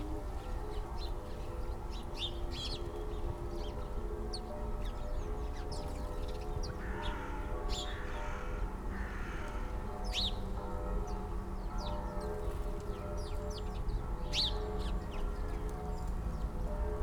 Tempelhofer Park, Berlin - sparrows in a rose hip bush
fluttering sparrows in a rose hip bush, Sunday churchbells, a sound system in the distance
(Sony PCM D50, DPA4060)
Berlin, Germany, 12 October 2014